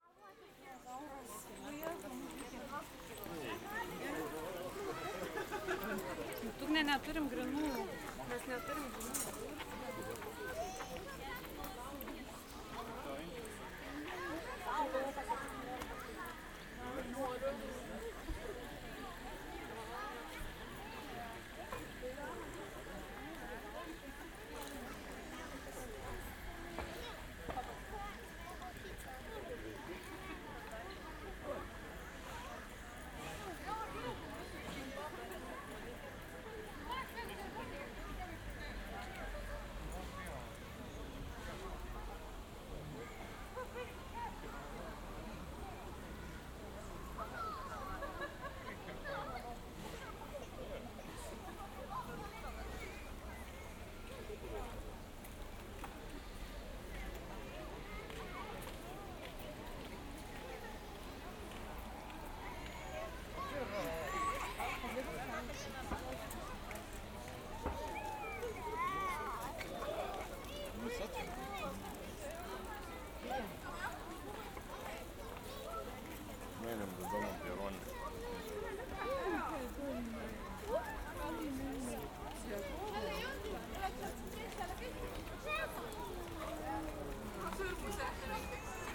Rīga, Latvia, walk in zoo
walk in zoo. sennheiser ambeo smart headset recording
Vidzeme, Latvija, 13 August 2022, ~15:00